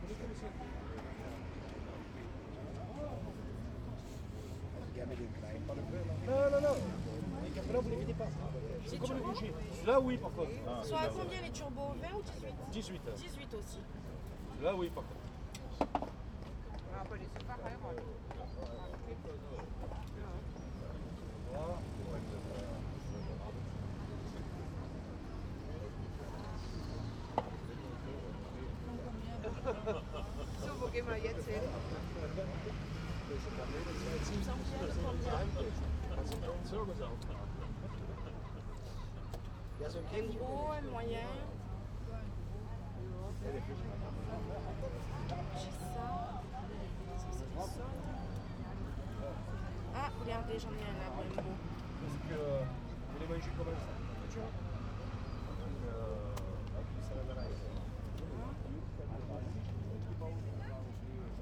prise de son au zoom H2 dimanche 24 janvier 2010 au matin vieux port de marseille